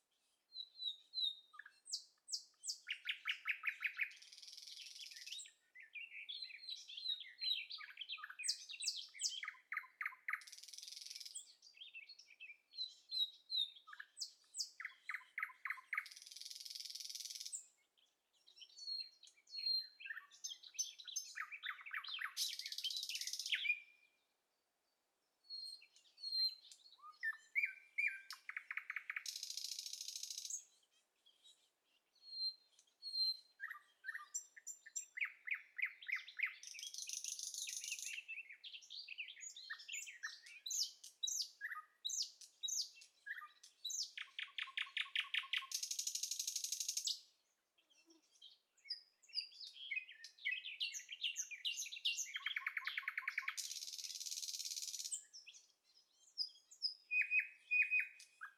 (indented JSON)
{
  "title": "Lithuania, at Gimziskiai mound",
  "date": "2011-05-30 16:00:00",
  "description": "Bush full of singing",
  "latitude": "55.44",
  "longitude": "25.63",
  "altitude": "147",
  "timezone": "Europe/Vilnius"
}